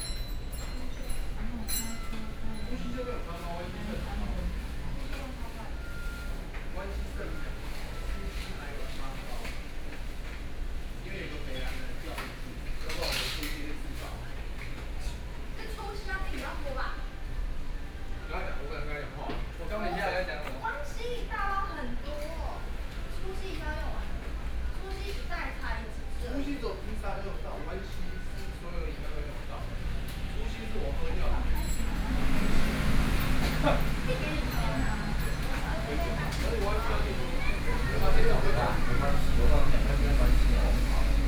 In the coffee shop, Sony PCM D50 + Soundman OKM II